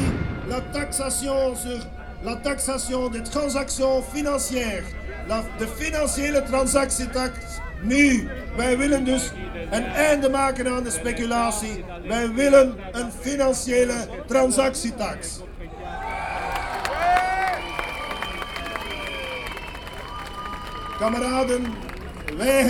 Brussels, Manifestation in front of Electrabel.
Manifestation devant Electrabel.